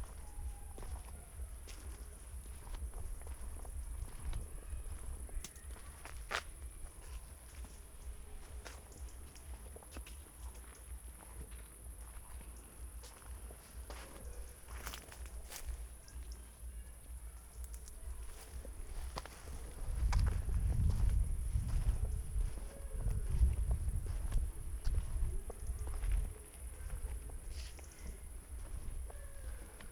Hintersiedlung, Bestensee, Deutschland - street walking
Bestensee, Saturday summer evening, walking around Thälmannstr
(Sony PCM D50, Primo EM172)
Bestensee, Germany, 23 July, 21:15